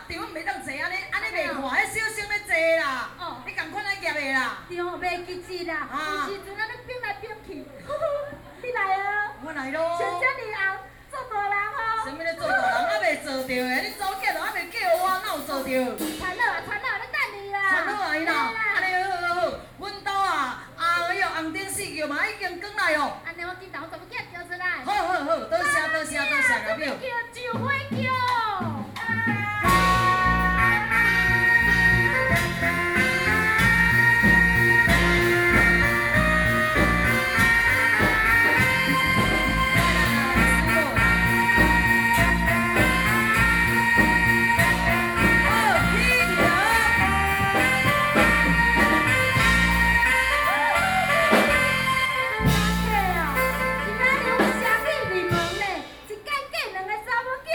Beitou, Taipei - Taiwanese Opera
Taiwanese Opera, Zoom H4n + Soundman OKM II
北投區, 台北市 (Taipei City), 中華民國